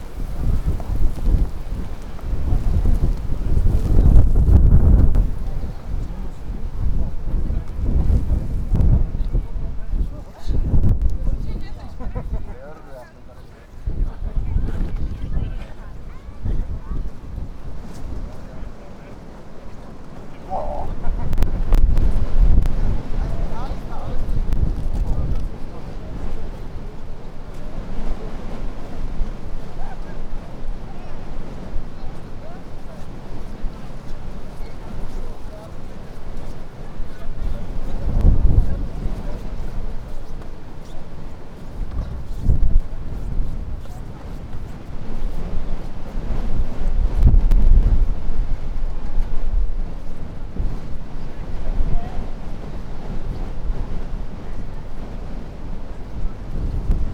Top of Sniezka mountain - top
recorded on the top of Sniezka mountain. Hiding behind a small building in order to avoid heavy wind (wasn't able to avoid some clipping and distortion). Tourists walking around, man pulling an upset dog, tiny shards of snow hitting the recorder. (sony d-50)
22 January 2017